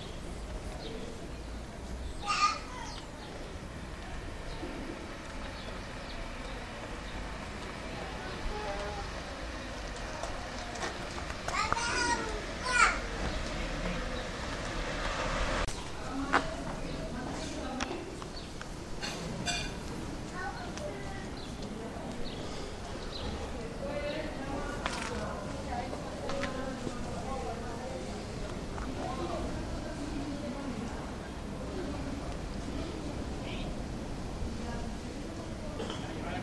Calle Hernando de Carabeo, Nerja - Siesta, walking the alleys
Field recording is one thing to do during siesta.
Beware of the dog!